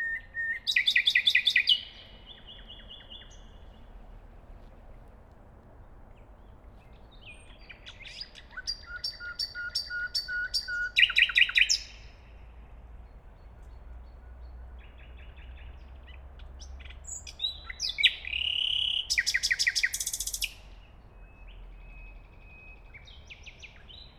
Heidekampweg, Berlin, Deutschland - Nightingale
A Nightingale sings in front of the microphone, others are audible left and right in a distance.
(Tascam DR-100, Audio Technica BP4025)
28 April 2022, 23:30